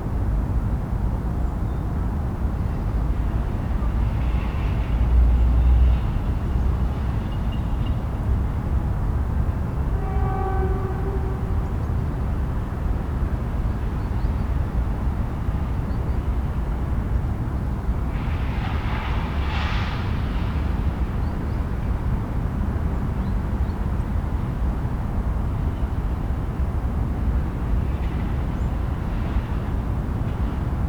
berlin: mergenthalerring - A100 - bauabschnitt 16 / federal motorway 100 - construction section 16: abandonned allotment
abandonned allotment (destroyed in february 2014)
sizzling noise of a reed screen fence, someone using an angle grinder, different birds, 2 local trains passing by and the distant drone of traffic
the motorway will pass the east side of this territory
the federal motorway 100 connects now the districts berlin mitte, charlottenburg-wilmersdorf, tempelhof-schöneberg and neukölln. the new section 16 shall link interchange neukölln with treptow and later with friedrichshain (section 17). the widening began in 2013 (originally planned for 2011) and shall be finished in 2017.
january 2014
9 January 2014, ~12:00